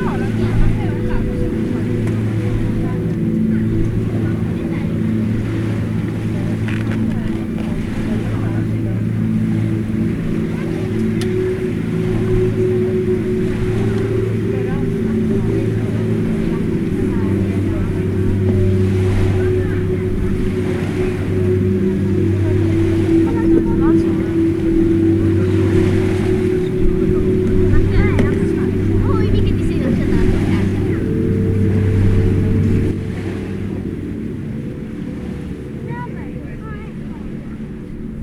{
  "title": "Alghero Sassari, Italy - Marina",
  "date": "2005-08-08 00:05:00",
  "description": "I recorded this while laying on the beach in Alghero. I'm not sure what was being played on the speakers on the beach that day but it mixed very well with the sounds of the beach.",
  "latitude": "40.57",
  "longitude": "8.32",
  "altitude": "10",
  "timezone": "Europe/Rome"
}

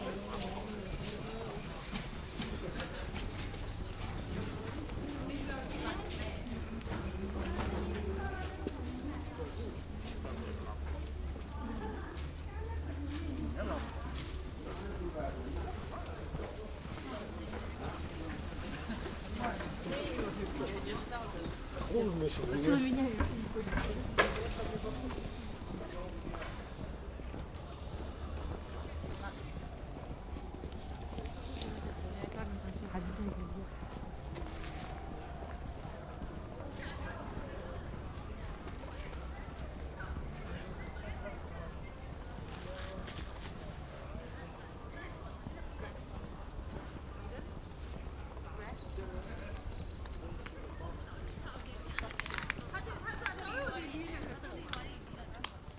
{
  "title": "beijing, temple of heaven, parkeingang",
  "date": "2008-05-23 12:41:00",
  "description": "beijing cityscape - park entree temple of heaven, afternoon\ninternational city scapes - social ambiences and topographic field recordings",
  "latitude": "39.89",
  "longitude": "116.41",
  "altitude": "46",
  "timezone": "Europe/Berlin"
}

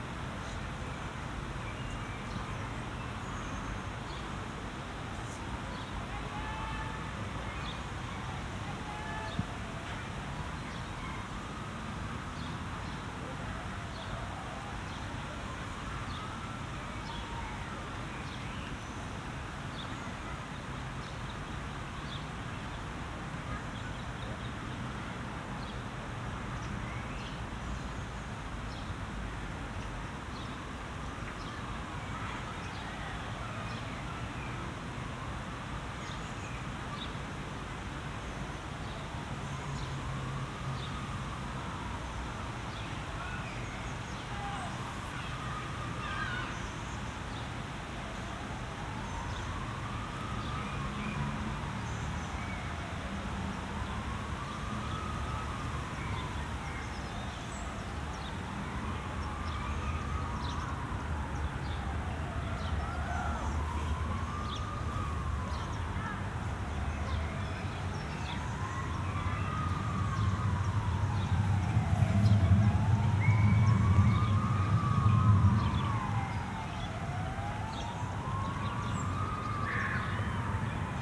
{
  "title": "Bempt, Forest, Belgique - Empty footbal playground",
  "date": "2022-06-10 16:10:00",
  "description": "Birds, distant cars, tramways, sirens, a child crying, a few bikers.\nTech Note : Ambeo Smart Headset binaural → iPhone, listen with headphones.",
  "latitude": "50.80",
  "longitude": "4.31",
  "altitude": "26",
  "timezone": "Europe/Brussels"
}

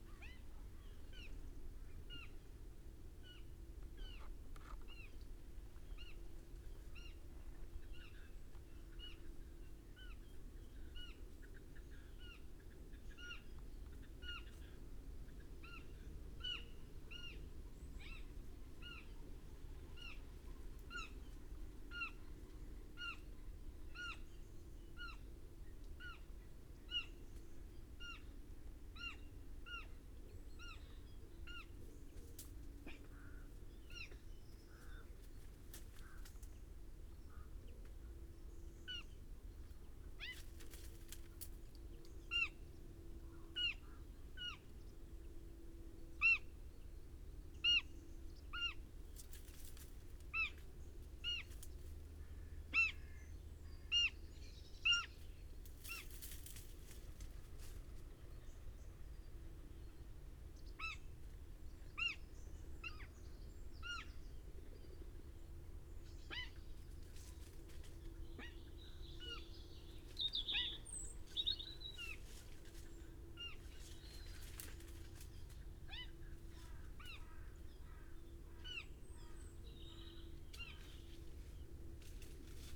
{
  "title": "Green Ln, Malton, UK - young roe deer ...",
  "date": "2020-10-11 07:41:00",
  "description": "young roe deer ... recording singing chiffchaff ... then heard this ... roe deer had crossed in front of me some five minutes previous ... young deer then wandered into the hedgerow space ... lost ..? separated ..? from adult ... dpa 4060s in parabolic to MixPre3 ... not edited ... opportunistic recording ... bird calls ... crow ... red-legged partridge ... pheasant ... blackbird ... pied wagtail ... wren ... robin ...",
  "latitude": "54.12",
  "longitude": "-0.57",
  "altitude": "92",
  "timezone": "Europe/London"
}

